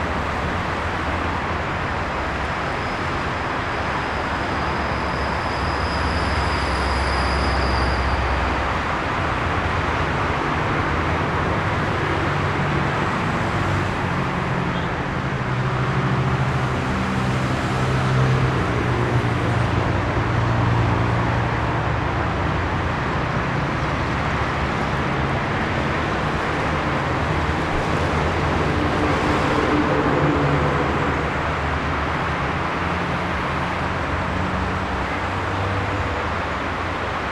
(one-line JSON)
{"title": "Tehran Province, Tehran, Dead End, Iran - Traffic", "date": "2013-07-29 00:39:00", "latitude": "35.73", "longitude": "51.42", "altitude": "1314", "timezone": "Asia/Tehran"}